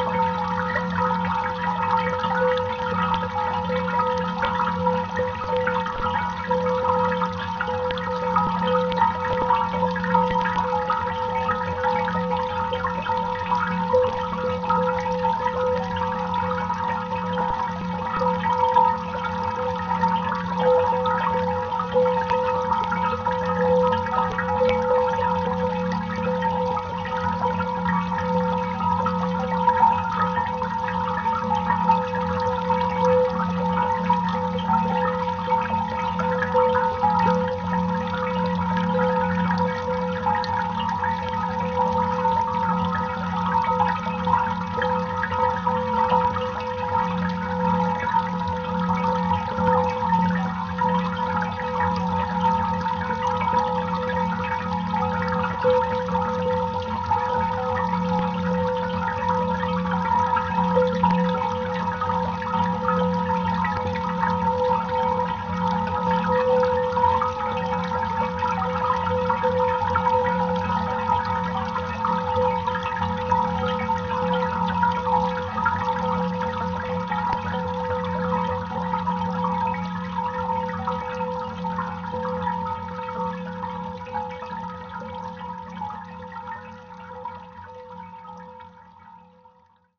Bathampton, Bath, UK - Cast Iron Water Fountain #2
Recorded using a Zoom H4 & a home made contact mic.